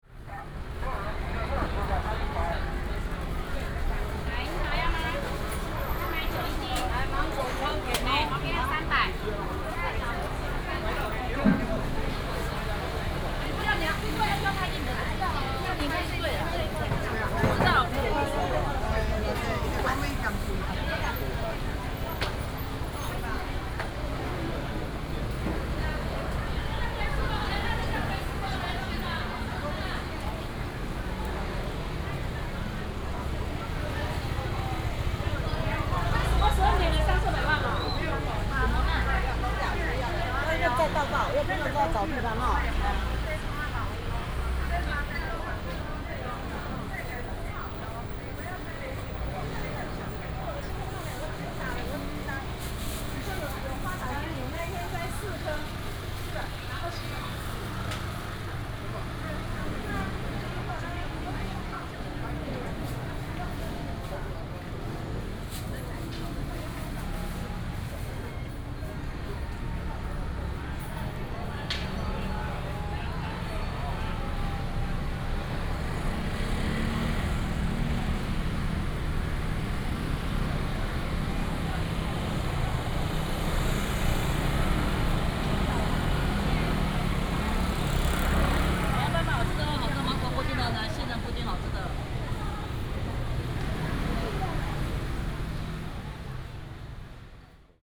Ln., Minquan Rd., Zhongli Dist. - Traditional market
Walking in the Traditional market
Taoyuan City, Taiwan